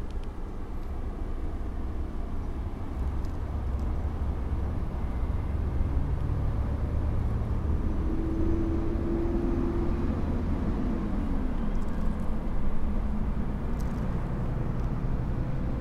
Liachaŭka, Minsk, Belarus - street and leaves